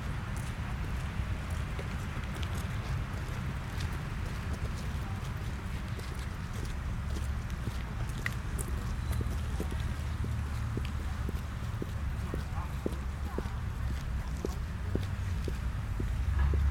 {"title": "winter day ambience on CAU campus", "date": "2010-01-26 20:40:00", "description": "students walking by leaving the mensa on a winter day in 2010.", "latitude": "54.34", "longitude": "10.12", "altitude": "36", "timezone": "Europe/Tallinn"}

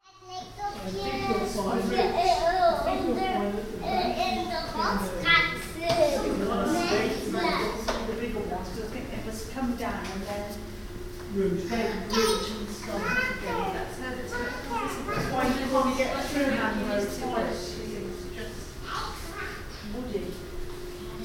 {"title": "Hortus Botanicus, Leiden. - Tourists", "date": "2011-07-30 15:27:00", "description": "English and East European tourists talking.\nZoom H2 recorder with SP-TFB-2 binaural microphones.", "latitude": "52.16", "longitude": "4.48", "altitude": "2", "timezone": "Europe/Amsterdam"}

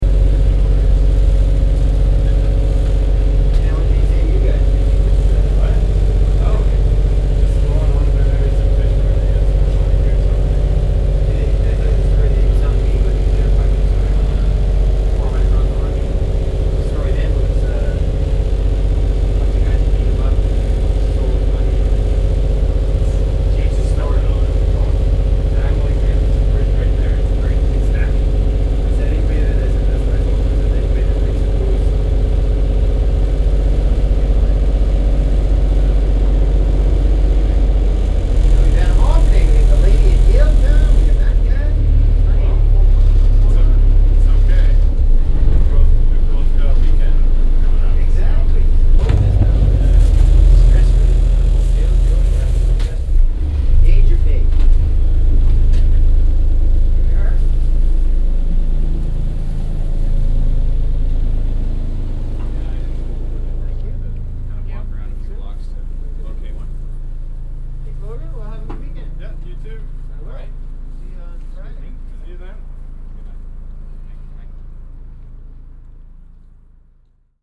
{"title": "vancouver, granville island, sea bus to hornby road", "description": "small boat transports passengers between the two watersides\nsoundmap international\nsocial ambiences/ listen to the people - in & outdoor nearfield recordings", "latitude": "49.27", "longitude": "-123.13", "altitude": "1", "timezone": "GMT+1"}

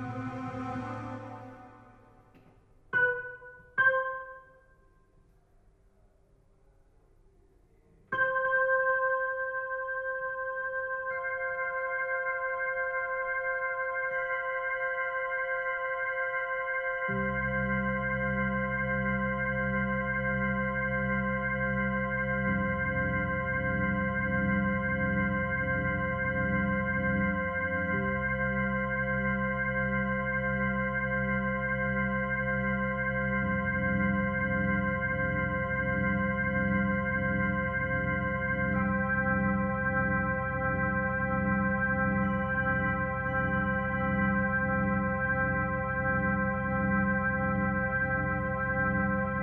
{"title": "kasinsky: a day in my life", "date": "2010-05-26 19:39:00", "description": "...pending actors, I find an electric piano...and play it...", "latitude": "42.86", "longitude": "13.57", "altitude": "158", "timezone": "Europe/Rome"}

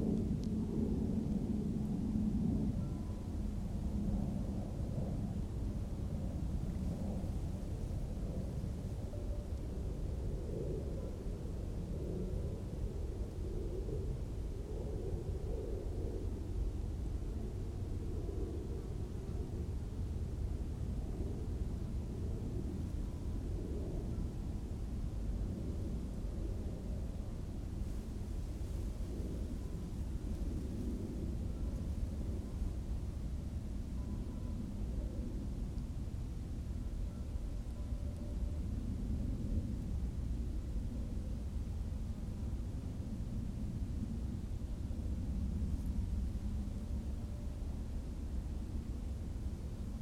{"title": "Hill88 soundscape, Headlands CA", "description": "ambient recording from the top of Hill 88", "latitude": "37.84", "longitude": "-122.53", "altitude": "277", "timezone": "Europe/Tallinn"}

